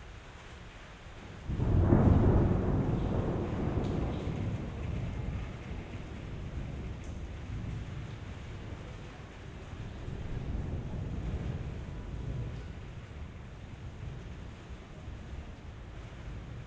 inner yard window, Piazza Cornelia Romana, Trieste, Italy - voices, thunder, light rain